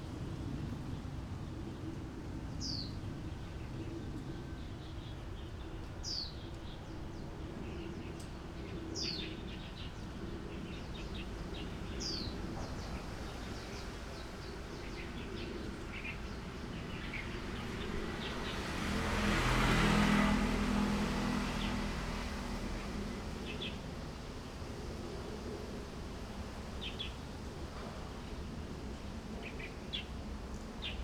篤行十村, Magong City - In large trees
In large trees, Wind, Birds singing, Traffic Sound
Zoom H6 Rode NT4